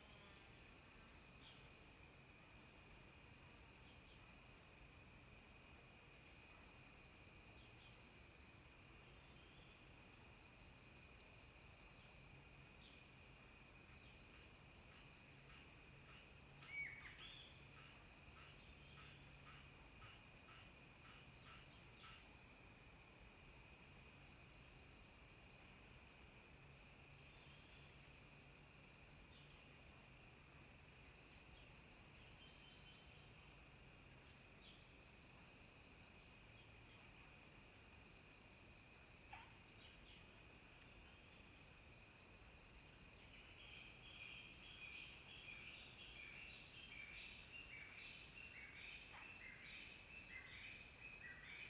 For woods, Bird sounds
Zoom H2n MS+XY